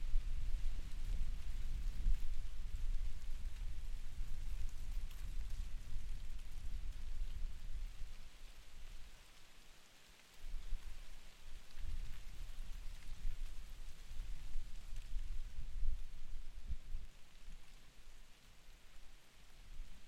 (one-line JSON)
{"title": "Portugal - Poplar leaves in the wind", "date": "2017-08-22 17:00:00", "description": "Poplar leaves in the wind", "latitude": "38.57", "longitude": "-8.12", "altitude": "331", "timezone": "Europe/Lisbon"}